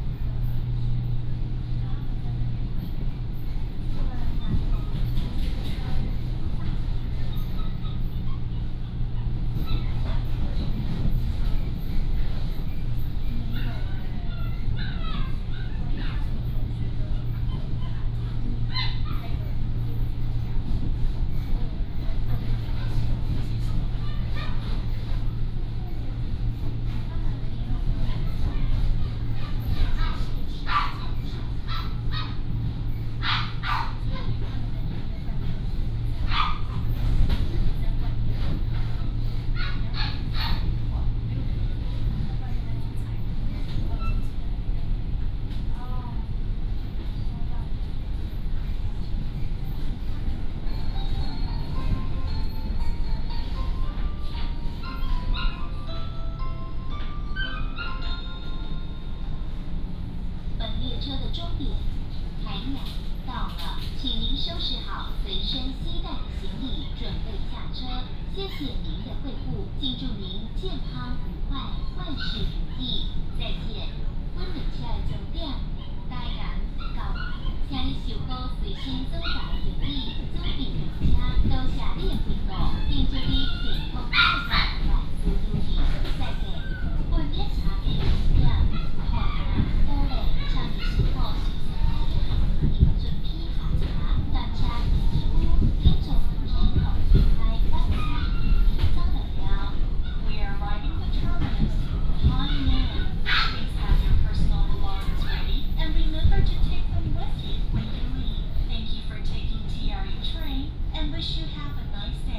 Shalun Line, Tainan City - In the carriage
From Bao'an Station to Tainan Station